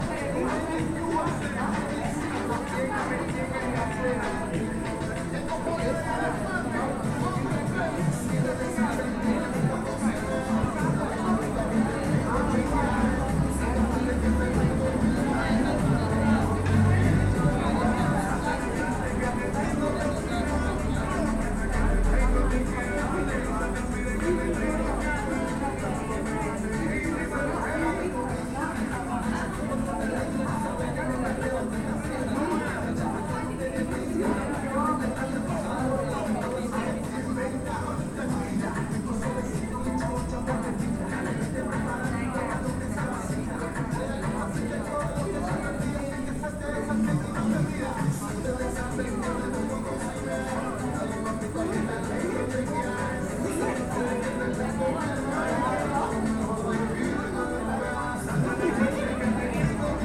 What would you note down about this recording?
one minute for this corner: Vetrinjska ulica and Tkalski prehod